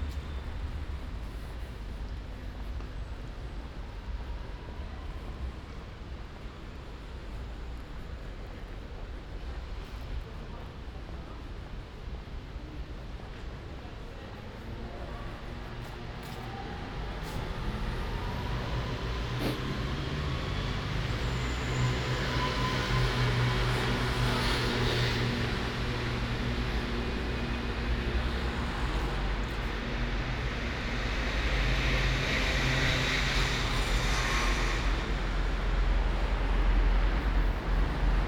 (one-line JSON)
{"title": "Paris soundwalks in the time of COVID-19 - Thursday night soundwalk in Paris in the time of COVID19: Soundwalk", "date": "2020-10-15 22:07:00", "description": "Thursday, October 15th 2020: Paris is scarlett zone fore COVID-19 pandemic.\nWalking from Conservatoire Supérieure de Musique et de Danse de Paris to Gare du Nord to airbnb flat. Wednesday evening was announced the COVID-19 curfew (9 p.m.- 6 a.m.) starting form Saturday October 17 at midnight. This is -3 night before.\nStart at 10:07 p.m. end at 10:42 p.m. duration 35’23”\nAs binaural recording is suggested headphones listening.\nBoth paths are associated with synchronized GPS track recorded in the (kmz, kml, gpx) files downloadable here:\nFor same set of recordings go to:", "latitude": "48.89", "longitude": "2.39", "altitude": "59", "timezone": "Europe/Paris"}